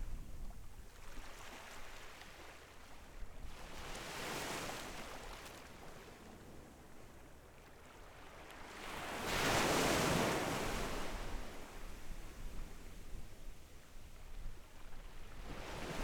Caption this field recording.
Sound of the waves, Very hot weather, In the beach, Zoom H6 XY